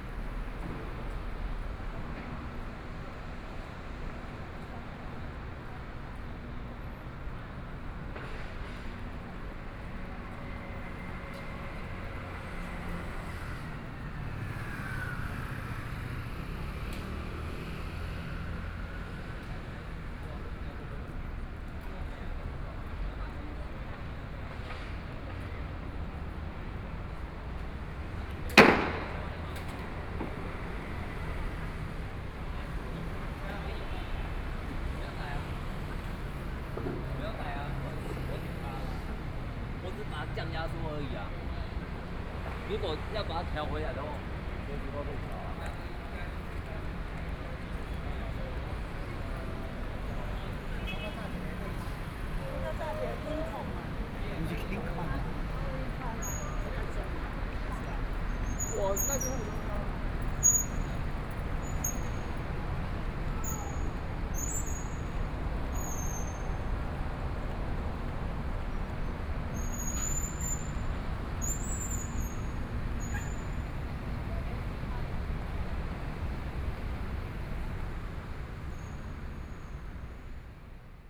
Songshan Dist., Taipei City - soundwalk
walking in the street, The crowd, Discharge, Traffic Noise, Binaural recordings, Sony PCM D50 + Soundman OKM II